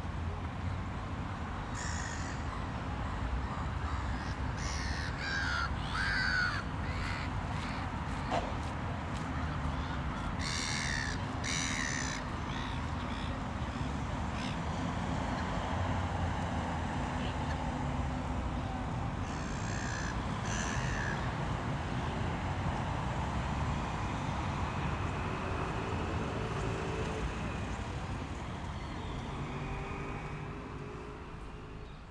Heemraadssingel, Rotterdam, Netherlands - Birds at Heemraadssingel
A very active group of different types of birds. It is also possible to listen to passersby walking on the wet gravel.